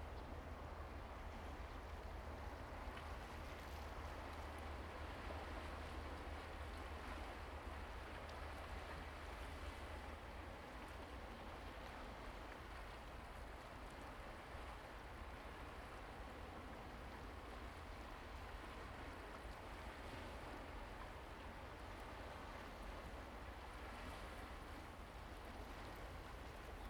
菓葉觀日樓, Huxi Township - the waves
Sound of the waves
Zoom H2n MS+XY
Penghu County, Huxi Township, 21 October